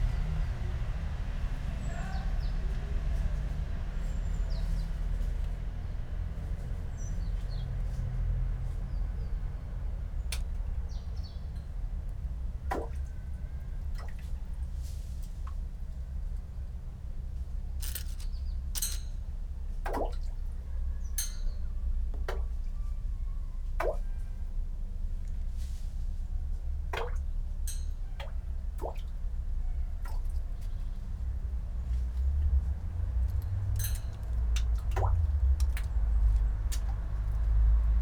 poems garden, Via Pasquale Besenghi, Trieste, Italy - abandoned well
small stones and dry leaves falling into forgotten well